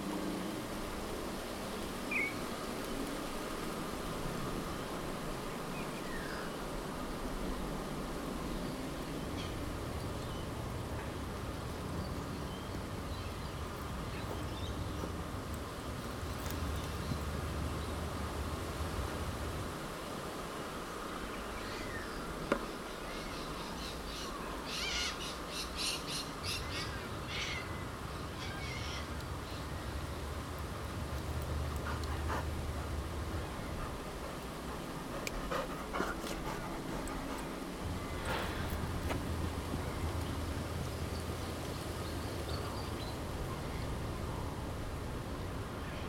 Dubove Park, Spearwood, Western Australia - Popular Dog Park - Birds, Dog and Anthropophony.
Apologies about the rather crude mastering on this - still learning how to remove wind gust noises smoothly.
Had to cut the recording short as my friend, who lives adjacent the park, brought his dogs down for a chat.
This park is very popular at 5pm with the local dog owners - original-to-the-area Italians, Croatians and Anglo Australians make an excellent, ragtag group of dog owners.
Recorded with h2n - surround mode. Zoom windjammer.